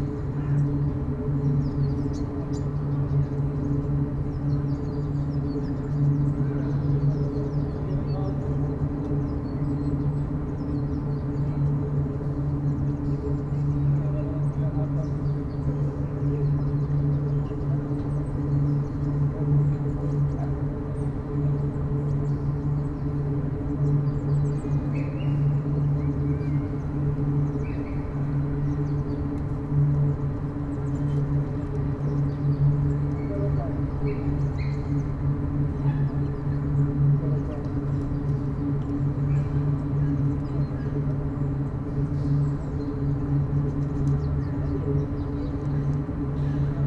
water tank vent 02, Istanbul
vent of an underground tank for the park water fountain
22 February 2010, 14:32